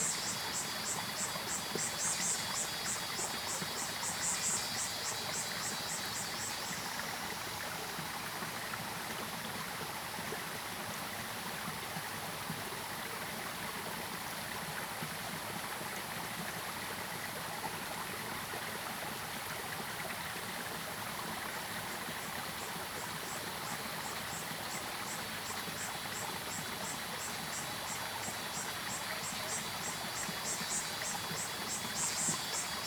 頂草楠, 種瓜坑溪, Puli Township - The upper reaches of the river
Cicadas called, Stream sound, Frogs called, The upper reaches of the river, Bird sounds
Zoom H2n MS+XY
Nantou County, Taiwan, 7 June 2016